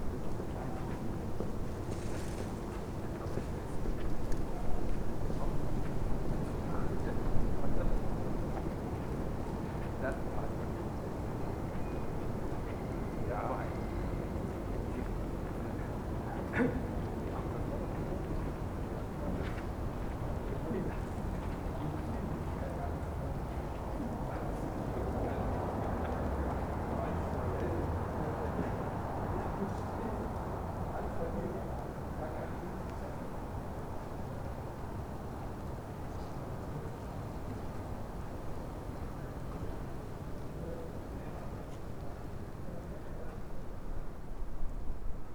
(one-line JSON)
{"title": "Berlin: Vermessungspunkt Friedel- / Pflügerstraße - Klangvermessung Kreuzkölln ::: 04.01.2012 ::: 01:50", "date": "2012-01-04 01:50:00", "latitude": "52.49", "longitude": "13.43", "altitude": "40", "timezone": "Europe/Berlin"}